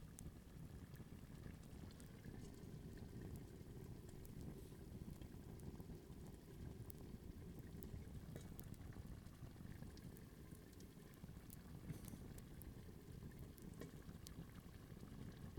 Trachoulas Beach, Gortina, Greece - Cooking with Fire
You can listen to the fire and the water boiling. We were cooking lentils..
20 August 2017